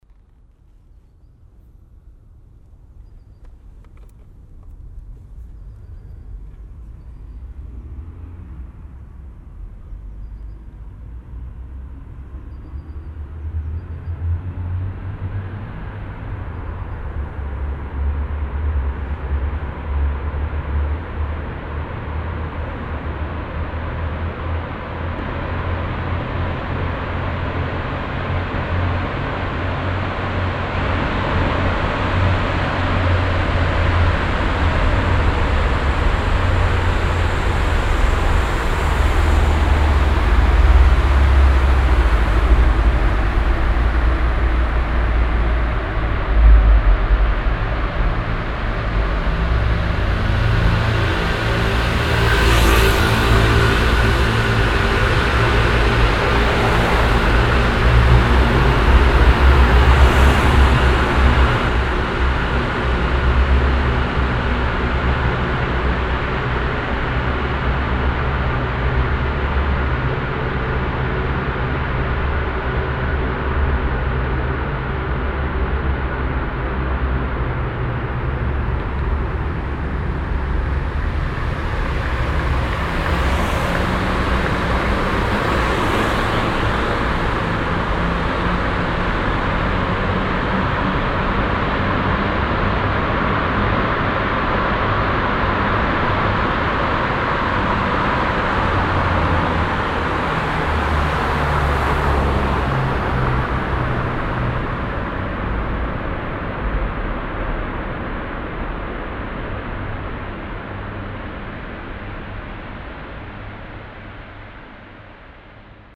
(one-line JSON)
{"title": "velbert, langenberg, dr.hans karl glinz str, autotunnel - velbert, langenberg, dr.hans karl glinz str, autotunnel 02", "description": "stereo okm aufnahme in autotunnel, morgens\nsoundmap nrw: social ambiences/ listen to the people - in & outdoor nearfield recordings", "latitude": "51.35", "longitude": "7.12", "altitude": "130", "timezone": "GMT+1"}